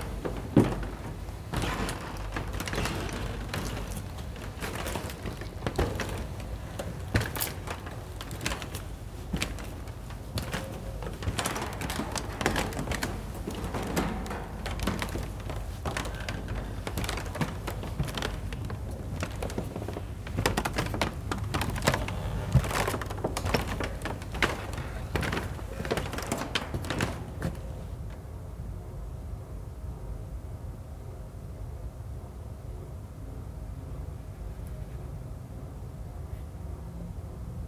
Nördliche Innenstadt, Potsdam, Germany - Nightingale floor - a pressure of creaks, squeaks and groans
Walking over the spectacularly creaky floor of the disused library/sports hall in ZeM (Brandenburgisches Zentrum für Medienwissenschaften - Brandenburg Media College). This impressive building is a legacy from the DDR, now used as a college but scheduled for demolition in the future. This recording was made walking over the wooden floor in the near dark trying to avoid empty shelves and somewhat precarious piles of discarded items stacked there. The idea of the 'nightingale floor' comes from Japan, where such a creaky floor was used to forewarn of approaching people and guard against attacks by stealthy assassins.
24 October 2016